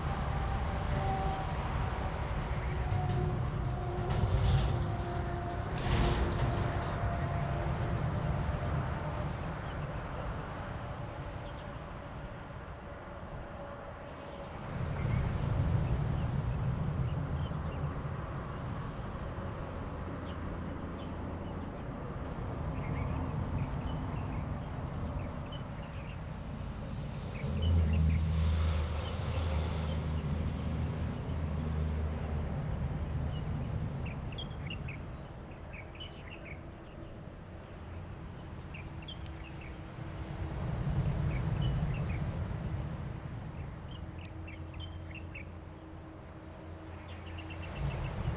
南興溪橋, 大武鄉南迴公路 - waves and bird tweets
The stream flows out of the sea, Waves, traffic sound
Zoom H2n MS+XY
Taitung County, Taiwan, 24 April